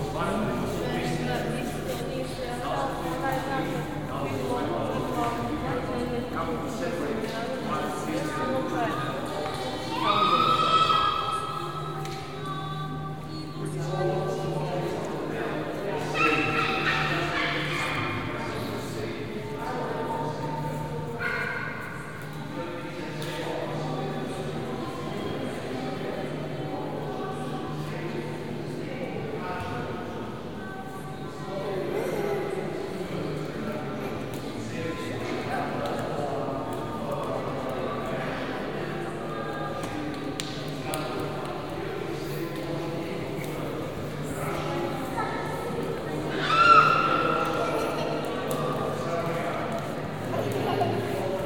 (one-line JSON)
{"title": "Church at Kotor, Czarnogóra - (220) BI Tourists inside church", "date": "2017-07-16 15:18:00", "description": "Binaural recording of tourist visiting church.\nSony PCM-D100, Soundman OKM", "latitude": "42.43", "longitude": "18.77", "altitude": "9", "timezone": "Europe/Podgorica"}